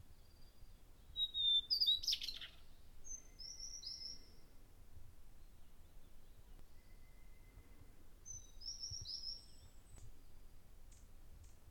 272台灣宜蘭縣南澳鄉金洋村 - 翠峰湖步道-鳥1
Bird sounds recording by H4n in Taiwan